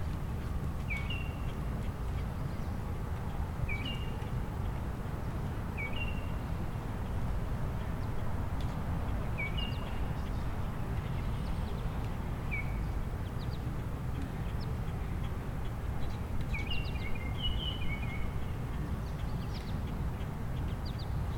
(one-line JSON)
{"title": "Nordheimer Ravine - Walkers in the Nordheimer Ravine", "date": "2020-05-25 18:00:00", "description": "People walking in the Nordheimer Ravine, one of Toronto's ravines made when the last ice age ended and Lake Algonquin drained.", "latitude": "43.68", "longitude": "-79.41", "altitude": "151", "timezone": "America/Toronto"}